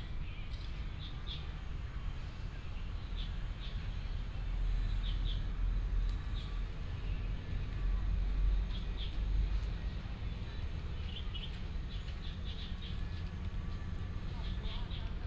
舊社公園, Beitun Dist., Taichung City - Walking in the park
Walking in the park, Traffic sound, Site construction sound, Bird call, Binaural recordings, Sony PCM D100+ Soundman OKM II
Taichung City, Taiwan